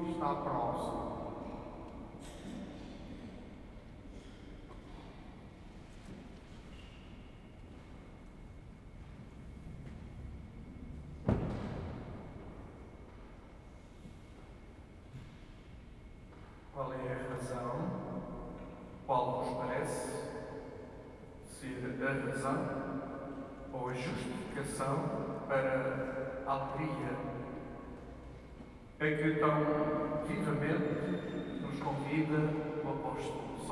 Santo Ildefonso, Portugal - Igreja da Trindade, Porto
Recorded inside Trindade Church in Porto.
Liturgical singing and sermon about happiness and christmas:
"O coração e os olhos são dois amigos leais, quando o coração está triste logo os olhos dão sinais" Luís Otávio
Zoom H4n